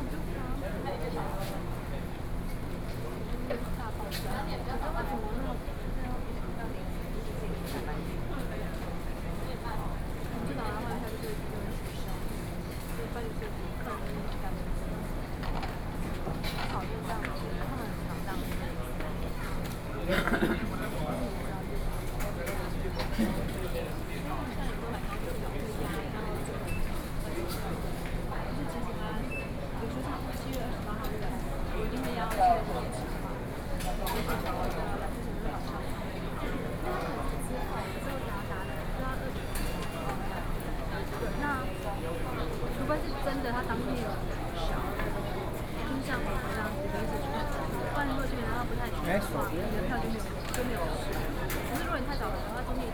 Taipei Main Station - Ticket office
Waiting in front of the Ticket office, Sony PCM D50 + Soundman OKM II
26 July 2013, ~14:00